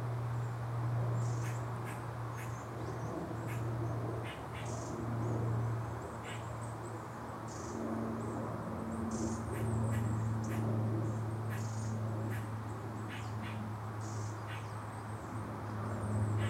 This is the sound in a clearing in the Glen of the Downs, of different birds, aeroplanes and the traffic on the N11. There was a road protest in this nature reserve at which I briefly lived in the Autumn/Winter of 1997 and we had a reunion this year to mark 20 years since the first protest tent went up. I also returned to the Glen one Autumn in the early 00s to re-record my vivid memories of having lived there, all of which were writ in sound. You can hear how loud the road is. Recorded with sound professional binaural microphones and an R-05.